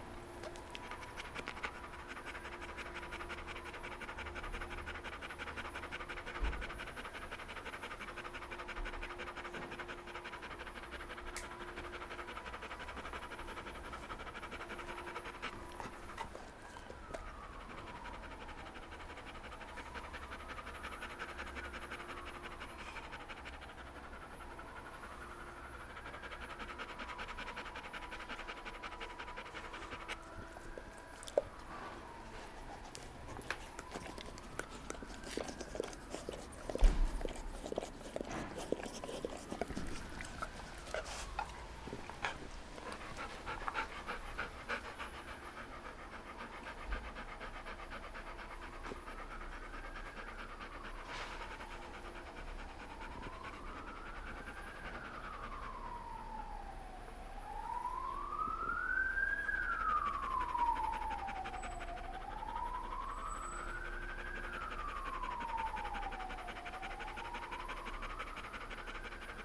Dikkaldırım Mahallesi, Büklüm Cd., Osmangazi/Bursa, Turkey - Garden
sounds from the garden and street around all mixed up: dog drinking water, recycle truck press sound, ambulance sirens and sounds from kitchen